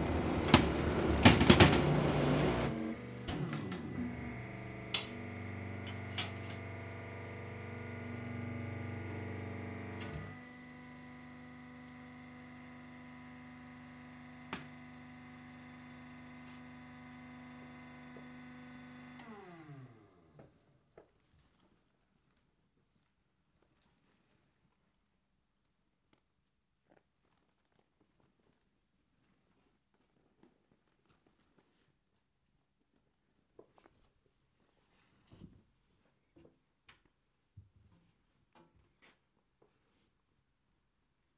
Jamieson & Smith, Shetland Islands, UK - Oliver Henry talking about the way wool should be presented to the wool grader, and wool being baled by the enormous baling machine
This is Oliver Henry (a wool sorter for 46 years) showing me some of the wool that has been sent in for sorting and grading. We are in the wool shed at Jamieson & Smith, surrounded by huge bags filled with fleeces fresh from the crofts; bales of sorted wool, ready to be taken for scouring and spinning; and the 1970s baling, which compresses roughly 300kg of wool into each big bale. Oliver is talking about how the wool should be presented when it is given in to the wool brokers for grading and sorting, and we are looking at some fleeces which have been sent in all in a jumble. Shetland sheep have quite varied fleeces, and you might have very fine wool in one part of the fleece but rougher wool in another; the rougher stuff gets graded in a certain way and mixed with other wool of a similar grade, to make carpets and suchlike. The softer stuff gets graded differently, and mixed with other wool of a similar high quality.
6 August 2013